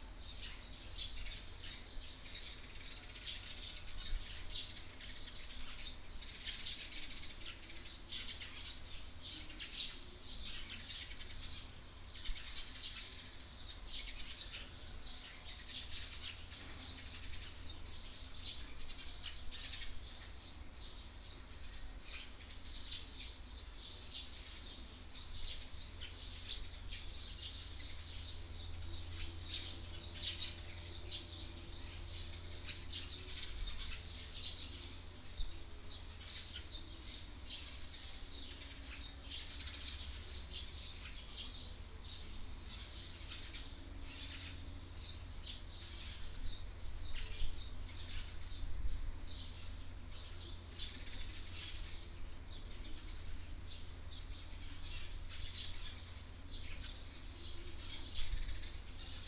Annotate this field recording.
In the temple plaza, Traffic Sound, Birdsong, Zoom H6 MS+ Rode NT4